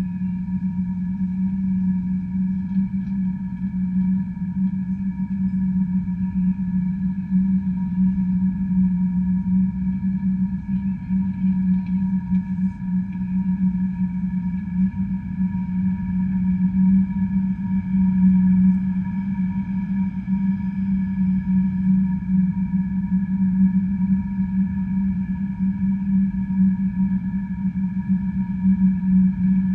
a discarded empty glass bottle sits in the grass alongside the ruined staircase. all recordings on this spot were made within a few square meters' radius.